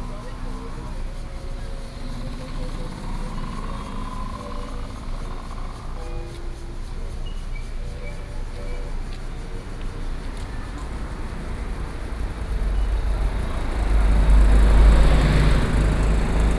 鹿野村, Luye Township - Small towns
In the street, Traffic Sound, Market, Small towns